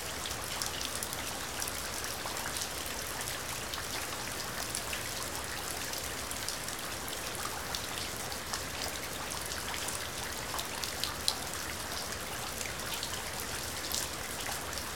Hamtramck, MI, USA - Rain Showers from Hamtramck Alley
June evening rain showers, recorded in a covered section of an alley on Hamtramck's south side. I only used a Tascam DR-07 with wind screen attached to a tripod. No thunder in this one, just nice soothing rain splashing into puddles.
16 June